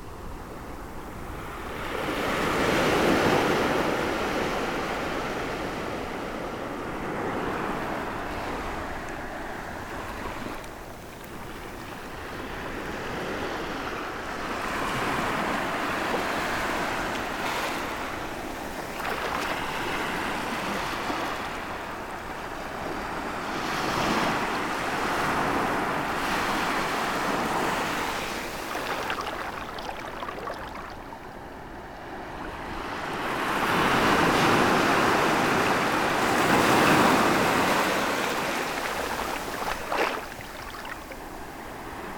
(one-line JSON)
{"title": "La Faute-sur-Mer, France - The sea", "date": "2018-05-23 18:00:00", "description": "Recording of the sea during high tide, receiding to low tide. Big but quiet waves.", "latitude": "46.34", "longitude": "-1.34", "timezone": "Europe/Paris"}